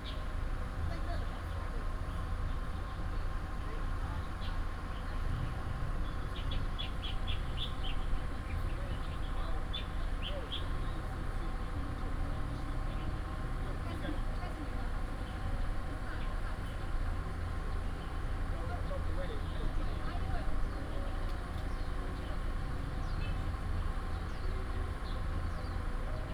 新屋福興宮, Taoyuan City - In the square of the temple
In the square of the temple, Bird call, Tourists, Hot weather, Binaural recordings, Sony PCM D100+ Soundman OKM II